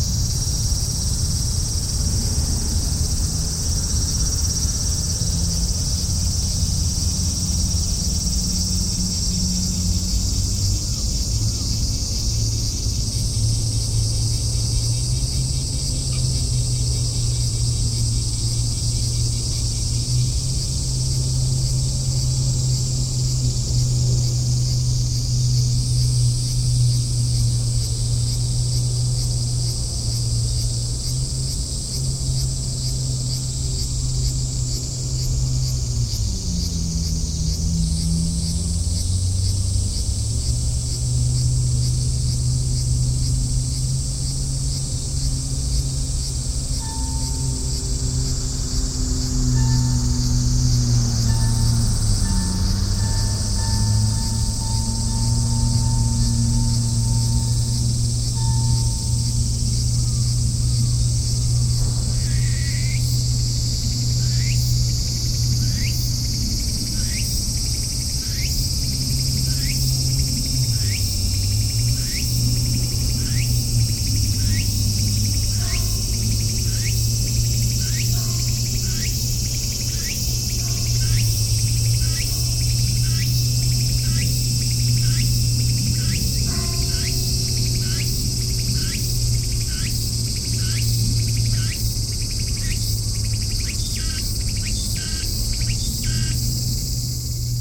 Chomeijicho, Omihachiman, Shiga Prefecture, Japan - Along Biwako near Chomeiji
Boats, personal watercraft, cicadas, and sounds from a nearby small temple a few hundred meters west of Chomeiji Port. Recorded on August 13, 2014 with a Sony M10 recorder, builtin mics facing Lake Biwa.
Omihachiman, Chomeijicho 県道25号線